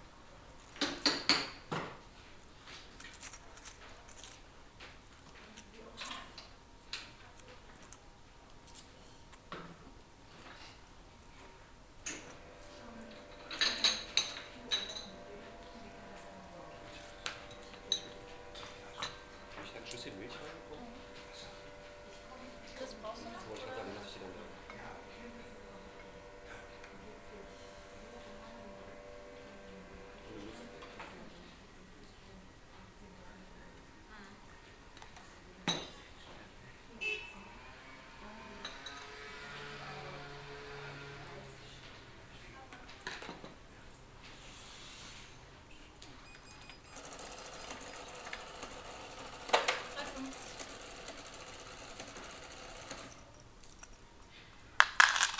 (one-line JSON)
{"title": "cologne, ubierring, cafe bude - cologne, south, ubierring, caffe bar", "date": "2008-05-25 15:40:00", "description": "soundmap: cologne, / nrw\nindoor atmo - cafe bude - mittags\nproject: social ambiences/ listen to the people - in & outdoor nearfield recording", "latitude": "50.92", "longitude": "6.96", "altitude": "54", "timezone": "Europe/Berlin"}